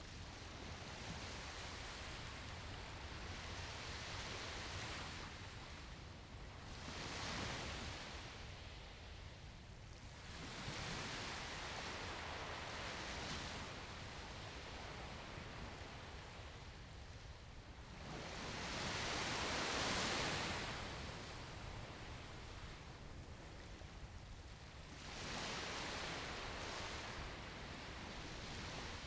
龍門沙灘, Huxi Township - At the beach
At the beach, sound of the Waves
Zoom H2n MS+XY